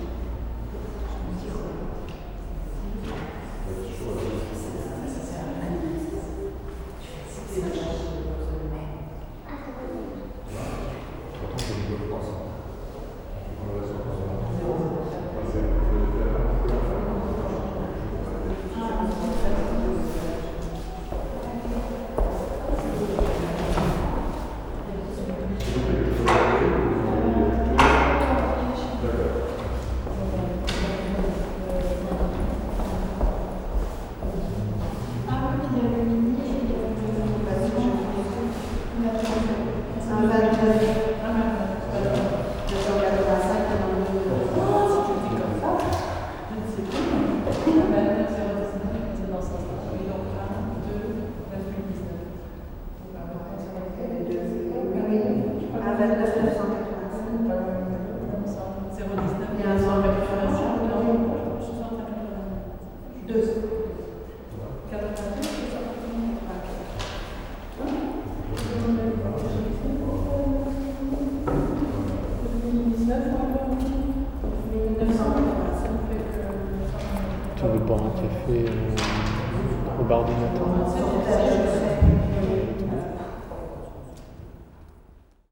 Brussels, Chaussée dAlsemberg, laboratory waiting room
Some inner field recordings as its still freezing outside :)
there is a nice reverb in this laboratory waiting room.
PCM-M10, internal microphones.